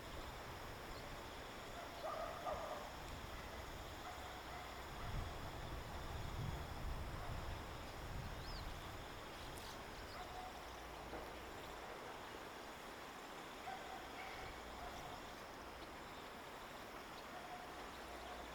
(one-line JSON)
{"title": "大武鄉加津林溪, Taitung County - On the river bank", "date": "2018-04-13 16:48:00", "description": "On the river bank, Bird call, Stream sound, Dog barking\nZoom H2n MS+XY", "latitude": "22.41", "longitude": "120.92", "altitude": "43", "timezone": "Asia/Taipei"}